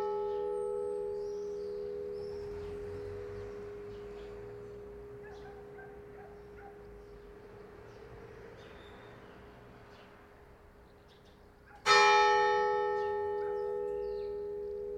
Avinguda de la constitució, Bolulla, Alicante, Espagne - Bolulla - Espagne - clocher 8h matin
Bolulla - Province d'Alicante - Espagne
Clocher - 8h (8 coups - 2 fois) + Angélus
Prise de sons :JF CAVRO
ZOOM F3 + AKG 451B
Alacant / Alicante, Comunitat Valenciana, España, 15 July 2022